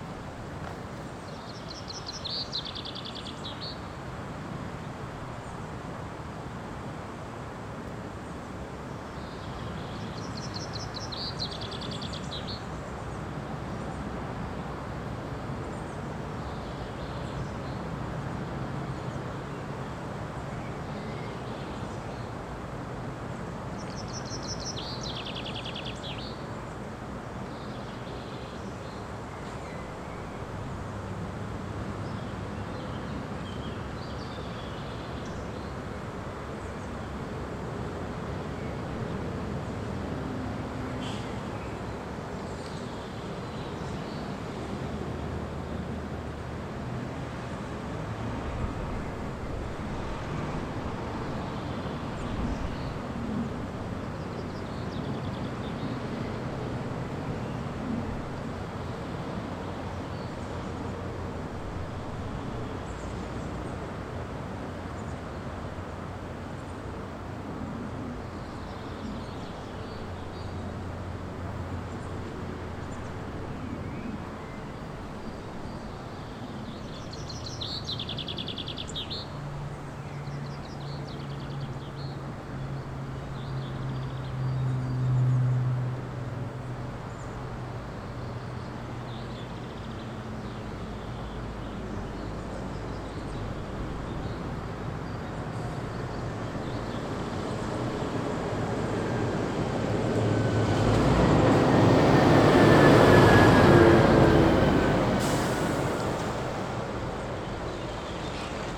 Hoog Buurloo, Apeldoorn, Nederland - Motorway Service Area 'Lucasgat’
Recording made while resting at the ‘Motorway Service Area Lucasgat’ on the A1 highway from Amersfoort to Apeldoorn. I placed my Zoom recorder for short time on the roof of my car. Slightly windy.